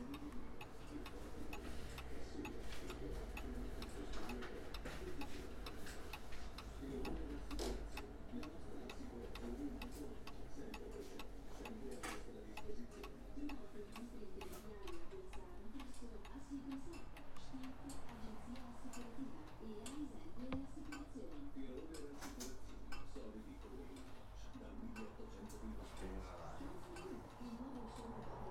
Alle ore 17 suonano vari orologi, l'orologiaio Gioacchino Faustinelli si aggira nel negozio e aziona altri orologi. Entra un cliente. Apertura della porta e uscita in via Marconi.
BZ, TAA, Italia, 26 October 2019